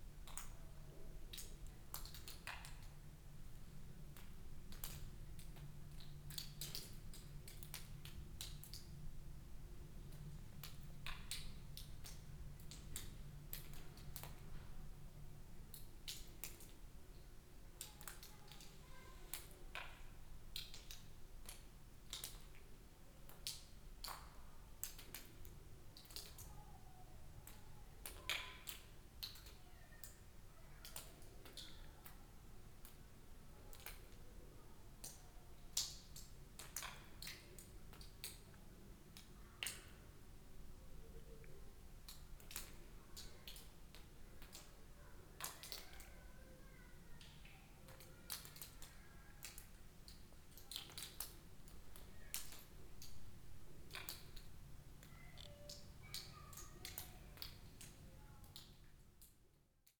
Corniglia - drops in railroad tunnel
water drops sipping from the ceiling of an abandoned railroad tunnel that curves under the village. (binaural)
La Spezia, Italy